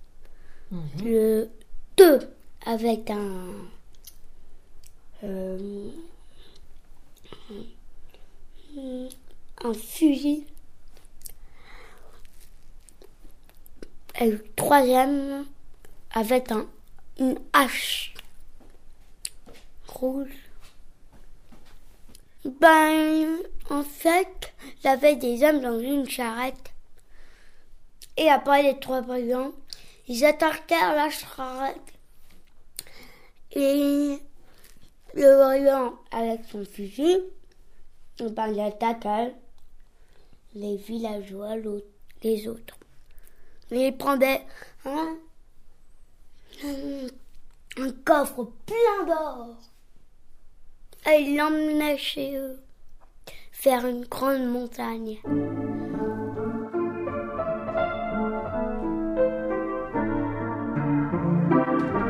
Félix prend son petit-déjeuner et se raconte...
5 July, 19:05